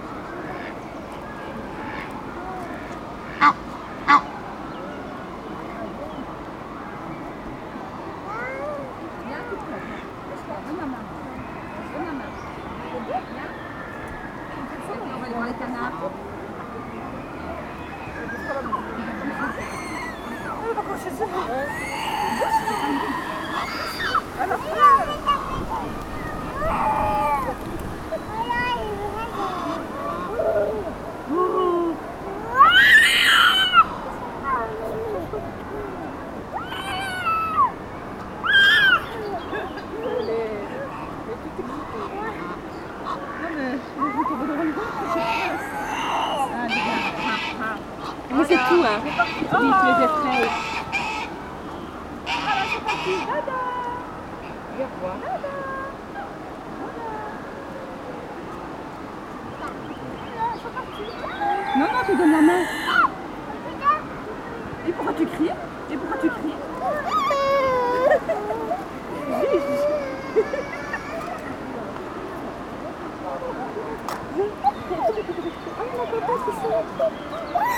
{"title": "Ottignies-Louvain-la-Neuve, Belgique - Egyptian gooses", "date": "2016-07-10 14:30:00", "description": "On a very busy day on the Bois des Rêves leisure parc, emden goose and egyptian gooses on a pontoon. After a few time, two young children are very interested by the birds.", "latitude": "50.66", "longitude": "4.58", "altitude": "79", "timezone": "Europe/Brussels"}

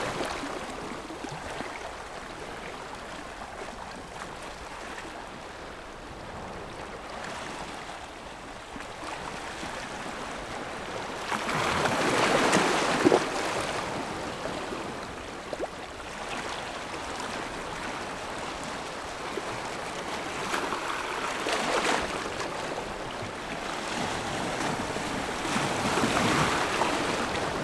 {"title": "科蹄澳, Nangan Township - Waves and tides", "date": "2014-10-14 12:03:00", "description": "On the rocky shore, Sound of the waves\nZoom H6+ Rode NT4", "latitude": "26.16", "longitude": "119.92", "timezone": "Asia/Taipei"}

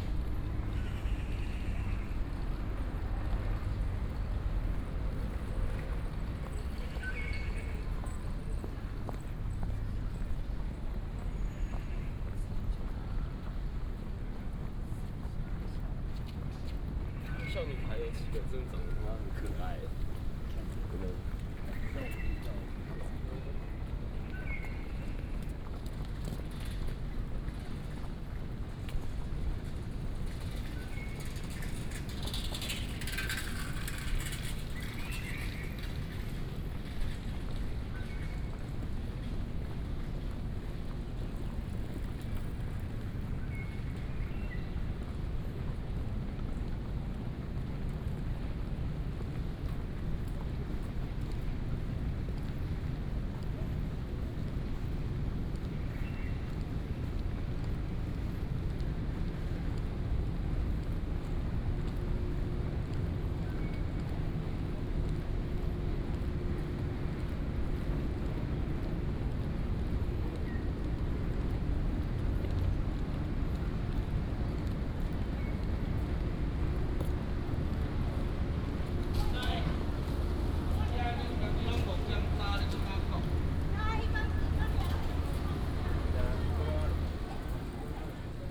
{"title": "Zhoushan Rd., Da’an Dist., Taipei City - walking In the university", "date": "2016-02-22 10:58:00", "description": "Bird calls, Traffic Sound, walking In the university", "latitude": "25.01", "longitude": "121.54", "altitude": "13", "timezone": "Asia/Taipei"}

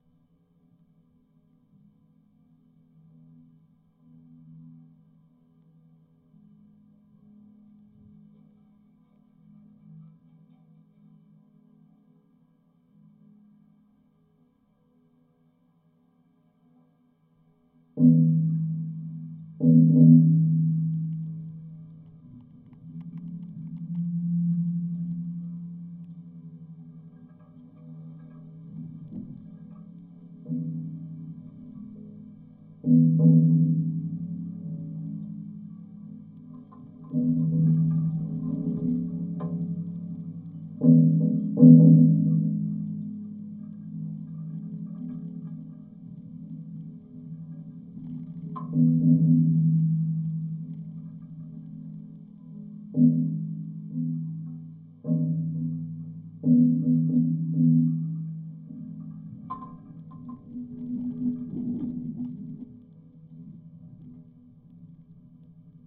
{"title": "Gaigaliai, Lithuania, a column of warehouse", "date": "2018-10-06 16:50:00", "description": "contact microphones on a column of half abandoned warehouse", "latitude": "55.62", "longitude": "25.60", "altitude": "130", "timezone": "Europe/Vilnius"}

